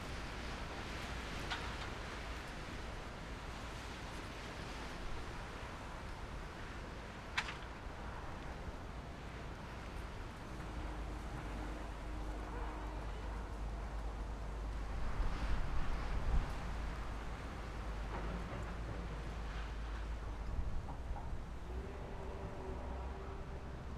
鐵線里, Magong City - wind and the tree
wind and the tree, Small pier, The distance the sound of house demolition
Zoom H6 + Rode NT4
Magong City, 澎27鄉道, 23 October 2014, ~10:00